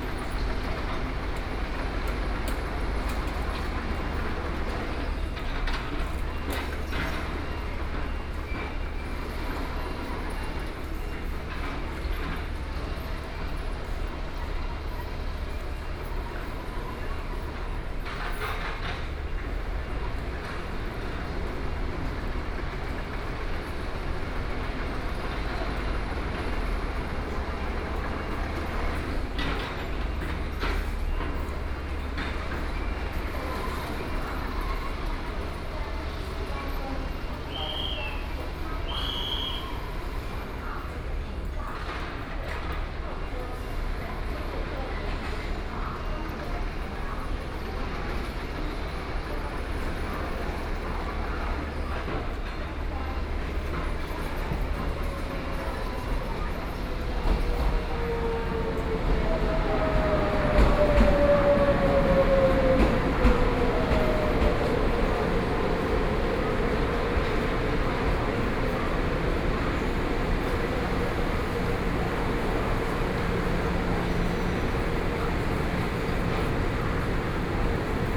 Taichung Station, Taichung City - In the station platform
In the station platform, Railway Construction, Station broadcast messages, Train stops, Zoom H4n+ Soundman OKM II
8 October 2013, ~12:00